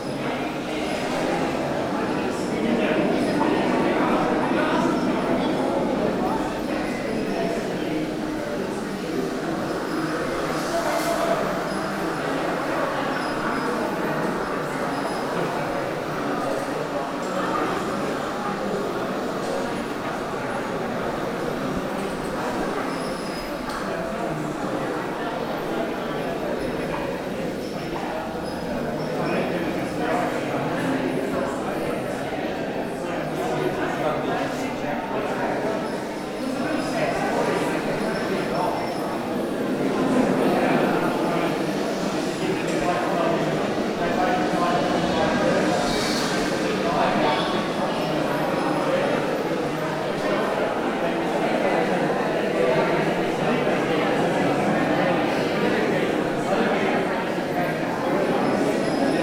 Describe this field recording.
augment_me installation opening BRAD MILLER